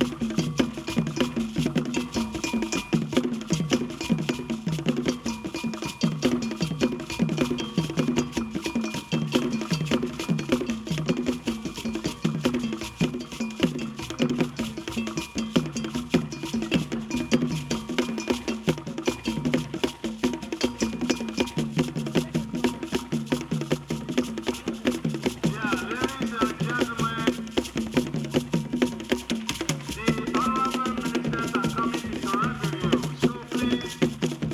Stadium St, Ho, Ghana - The big annual Framers Festival 2004 - feat. Kekele Dance Group
the grand finale of the farmers festival with drinks and snacks for everyone and a performance of the wonderfull Kekele Dance Group